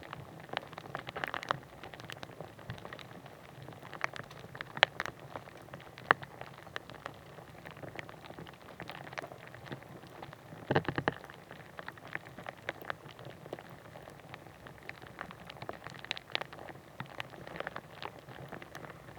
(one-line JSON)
{"title": "Spathyphyllum, Riga Botanical Gardensl", "date": "2011-09-09 12:13:00", "description": "Plant recording made for White Night, Riga 2011.", "latitude": "56.95", "longitude": "24.06", "altitude": "12", "timezone": "Europe/Riga"}